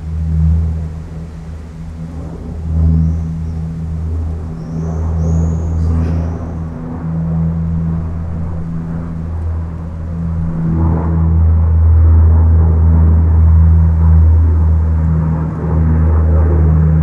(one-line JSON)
{"date": "2011-06-23 07:20:00", "description": "Brussels, Place Loix on a windy day.", "latitude": "50.83", "longitude": "4.35", "altitude": "59", "timezone": "Europe/Brussels"}